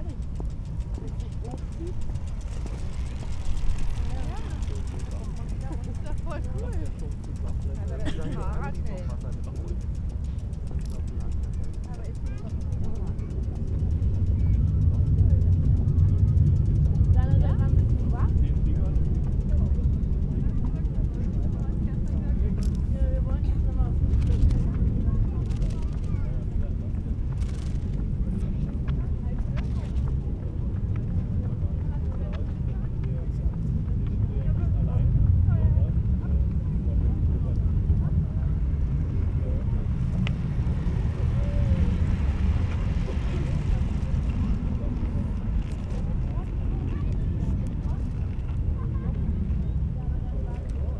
berlin, tempelhof airport - sunday ambience
warm late october sunday, ambience at former tempelhof airport. people enjoy the huge empty space within the city area. bikes, surfer, kites, pedestrians.
recorded at high levels, to catch the various deep drones in the air at this place.
Berlin, Germany, 30 October 2011